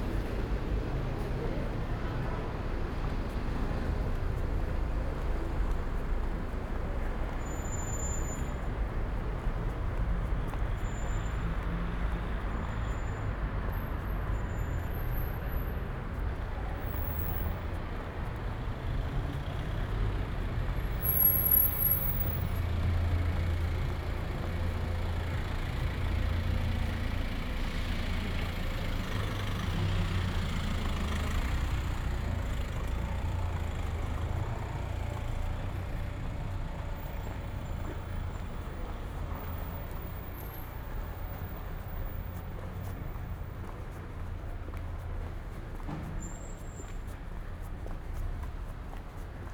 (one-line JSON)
{"title": "Ascolto il tuo cuore, città. I listen to your heart, city. Several chapters **SCROLL DOWN FOR ALL RECORDINGS** - It’s five o’clock on Saturday with bells in the time of COVID19: Soundwalk", "date": "2021-02-13 16:55:00", "description": "\"It’s five o’clock on Saturday with bells in the time of COVID19\": Soundwalk\nChapter CXXXI of Ascolto il tuo cuore, città. I listen to your heart, city\nSaturday, February 13th, 2021. San Salvario district Turin, walking to Corso Vittorio Emanuele II, then Porta Nuova railway station and back.\nMore than three months of new restrictive disposition due to the epidemic of COVID19.\nStart at 4:55 p.m. end at 5:36 p.m. duration of recording 40’53”\nThe entire path is associated with a synchronized GPS track recorded in the (kmz, kml, gpx) files downloadable here:", "latitude": "45.06", "longitude": "7.68", "altitude": "249", "timezone": "Europe/Rome"}